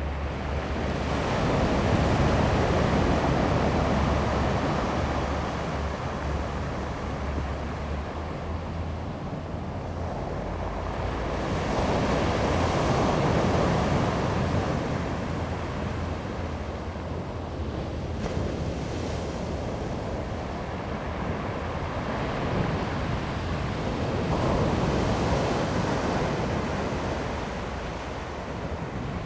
金崙海灘, Jinlun, Taimali Township - Sound of the waves
Sound of the waves, at the beach, The sound of a distant train
Zoom H2n MS+XY
Taitung County, Taiwan